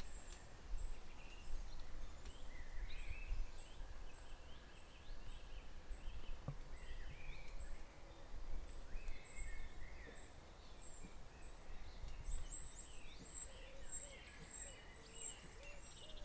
Lyng Rd, Sparham, Norwich, UK - Birds at Lyng by Ali Houiellebecq
Walking through woodland at the height of Spring and during the Covid-19 Lockdown in Norfolk in the UK. Recording made by sound artist Ali Houiellebecq.
England, United Kingdom, 10 June 2020